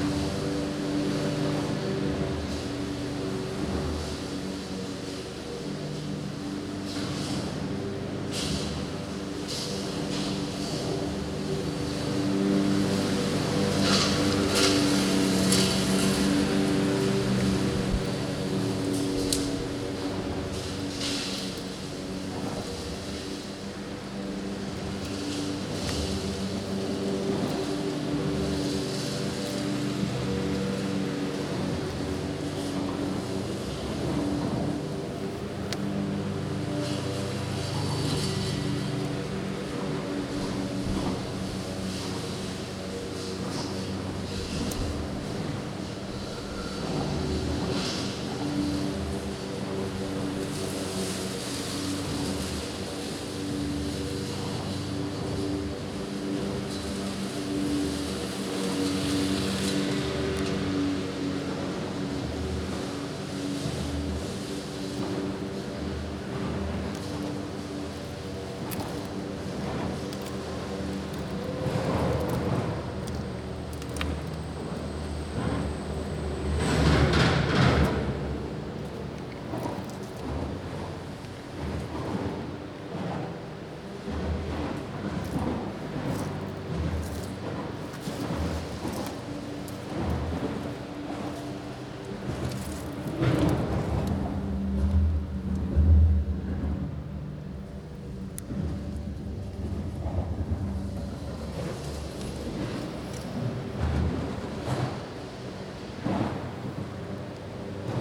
Sulphur Beach Reserve (Low Tide)
Walk along Sulphur Beach reserve at low tide
Auckland, New Zealand, 27 September